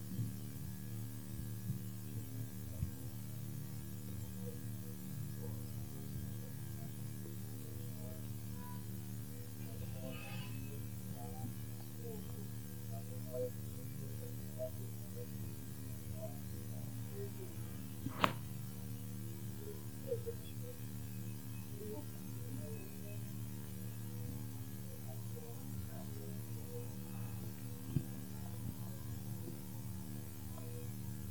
R. Maj. Sertório - Vila Buarque, São Paulo - SP, Brasil - Piezo em baixo da terra com água (3 minutos)
Água sendo jogada sobre a terra, com piezo enterrado.
- Vila Buarque, São Paulo - SP, Brazil, 21 June 2018, 11:00